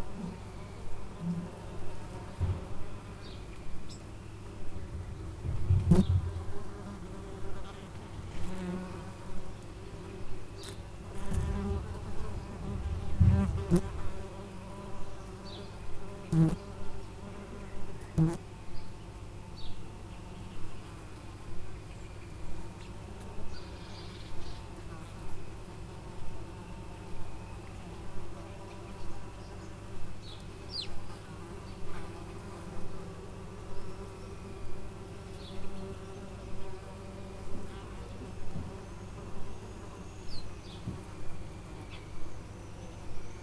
Twistringen, Heinzs yard, bees & wasps
recorder was set under a cherry tree, all kinds of insects were flying around the fruits on the ground